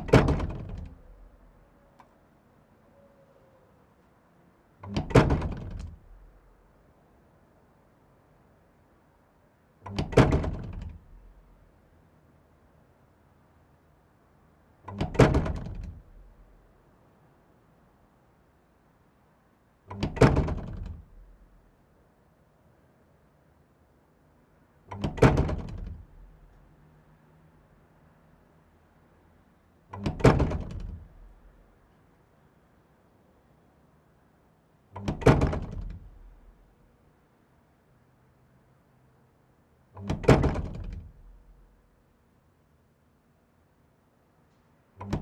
Rue de la Vieille Cour, Arcisses, France - Margon - Église Notre Dame du Mont Carmel
Margon (Eure et Loir)
Église Notre Dame du Mont Carmel
le Glas - manifestement défectueux...